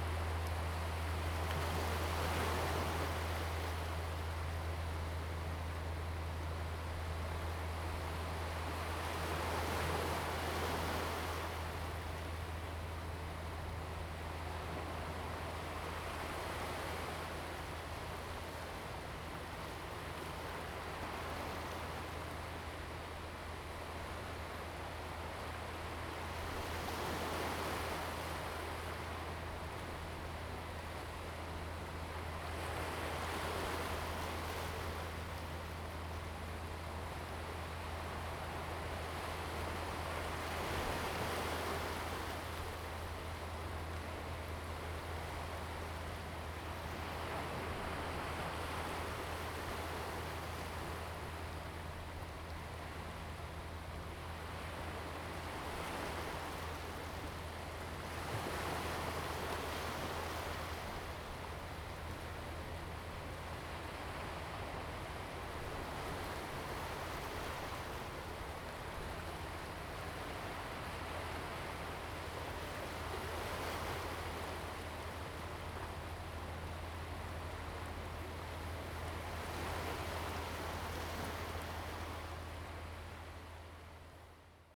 福德古井, Huxi Township - the Waves
At the beach, sound of the Waves, Distant fishing vessels
Zoom H2n MS+XY